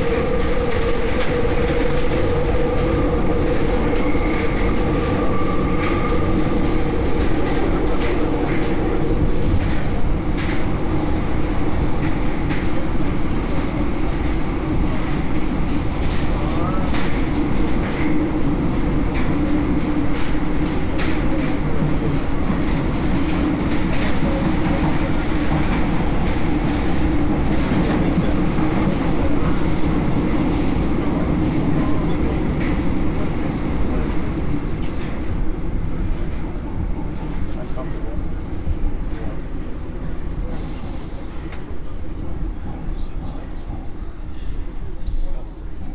{"title": "Rue du Bac, Paris, France - (381) Metro ride from Rue du Bac station", "date": "2018-09-27 17:06:00", "description": "Metro ride from Rue du Bac to Concorde station.\nrecorded with Soundman OKM + Sony D100\nsound posted by Katarzyna Trzeciak", "latitude": "48.86", "longitude": "2.33", "altitude": "45", "timezone": "Europe/Paris"}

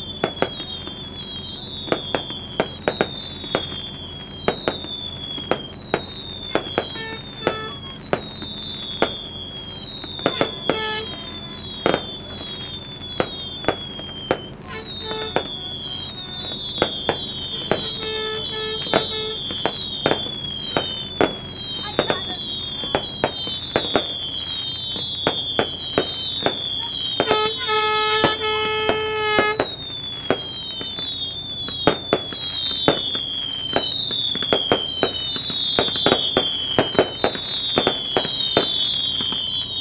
{"title": "The Sound, Plymouth - Fishing for Leave", "date": "2018-04-08 16:00:00", "description": "I met a fisherman called Dave who invited me out onto his boat to record a pro-Leave demonstration that he was going to be part of. A fleet of local fishing boats did a couple laps around the Sound before sitting by the waterfront for a couple of minutes to make some noise.", "latitude": "50.36", "longitude": "-4.14", "timezone": "Europe/London"}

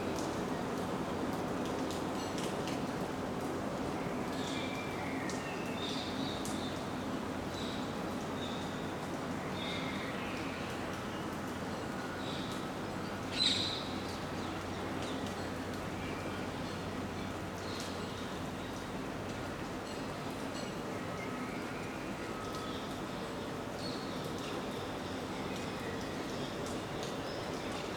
{"title": "Waldparkdamm, Mannheim, Deutschland - Am Rhein bei Starkregen", "date": "2022-06-08 17:53:00", "description": "Rhein, starker Regen, Binnenschiff, Halsbandsittich, Urban", "latitude": "49.47", "longitude": "8.47", "altitude": "103", "timezone": "Europe/Berlin"}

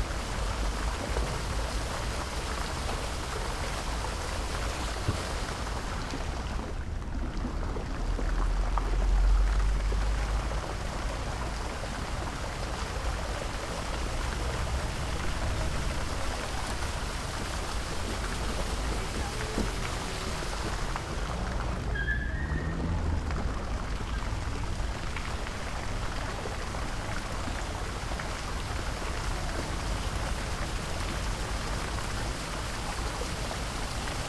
{"title": "plac Karola Marcinkowskiego, Gostyń, Polska - Fountain in City Park", "date": "2021-07-19 15:10:00", "description": "Ambience recording of a summer day in a city park near the fountain. Recorded with Sony PCM-D100.", "latitude": "51.88", "longitude": "17.01", "altitude": "92", "timezone": "Europe/Warsaw"}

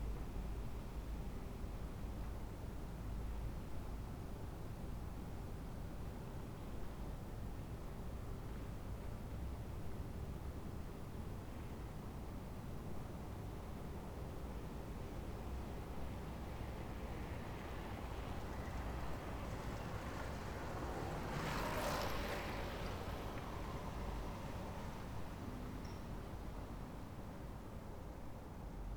{"title": "Berlin: Vermessungspunkt Friedelstraße / Maybachufer - Klangvermessung Kreuzkölln ::: 26.10.2011 ::: 03:05", "date": "2011-10-26 03:05:00", "latitude": "52.49", "longitude": "13.43", "altitude": "39", "timezone": "Europe/Berlin"}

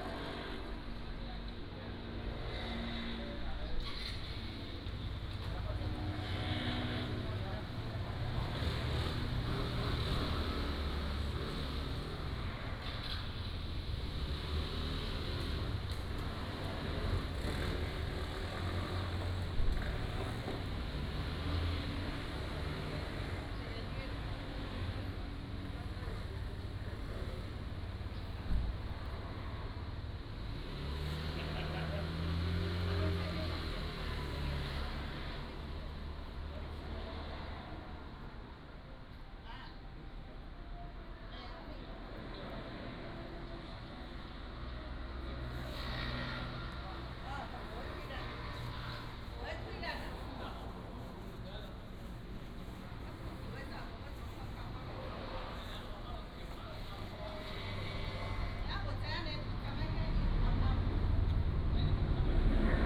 {"title": "興仁里, Magong City - In the square", "date": "2014-10-23 17:22:00", "description": "In the temple square, Traffic Sound, Small village, Birds singing", "latitude": "23.55", "longitude": "119.61", "altitude": "16", "timezone": "Asia/Taipei"}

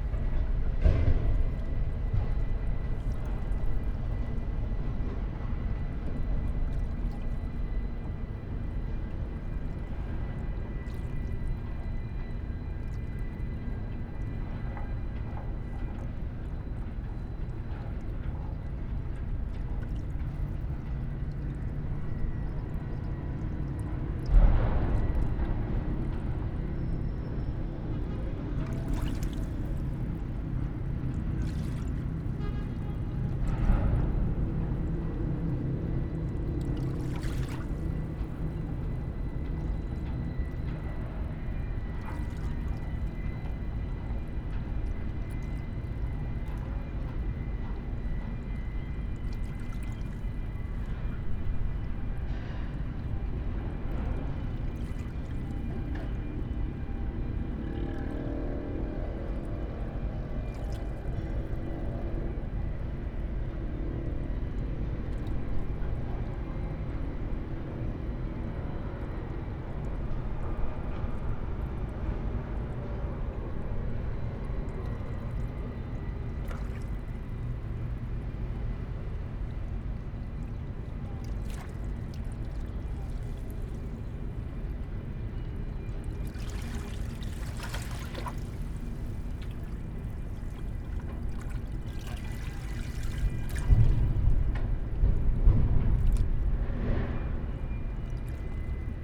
{"title": "Triq San Patrizju, Kalafrana, Birżebbuġa, Malta - distant Freeport sounds", "date": "2017-04-03 17:00:00", "description": "gentle waves in small rock bay, distant harbour sounds, mics lying in the sand.\n(SD702 DPA4060)", "latitude": "35.82", "longitude": "14.53", "altitude": "1", "timezone": "Europe/Malta"}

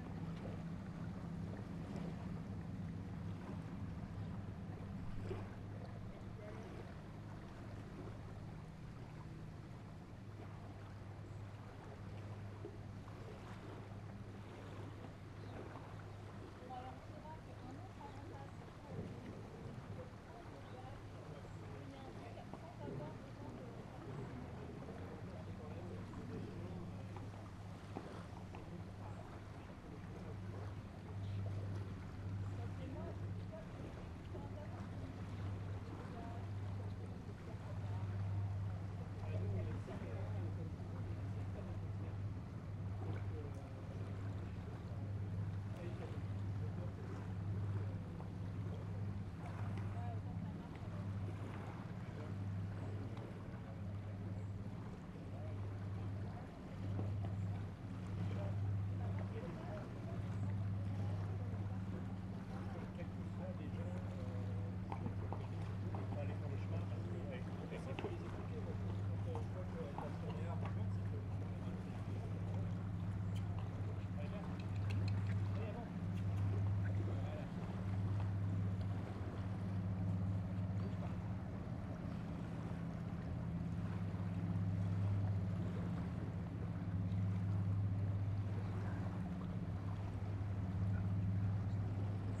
{"title": "Yainville, France - Yainville ferry", "date": "2016-09-17 10:15:00", "description": "The Yainville ferry is charging horses. The animals are very very tensed because of the boat noise.", "latitude": "49.46", "longitude": "0.82", "altitude": "3", "timezone": "Europe/Paris"}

